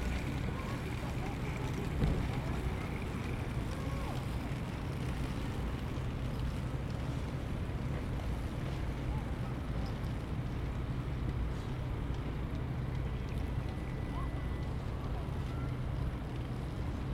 Downtown, Le Havre, France - tram in LH
2 March 2014